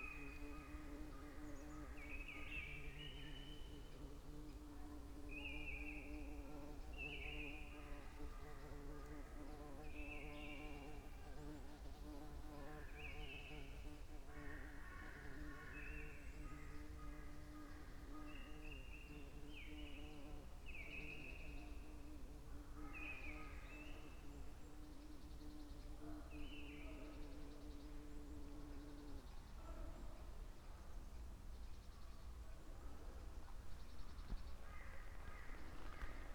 path of seasons, march forest, piramida - bumblebee, dry leaves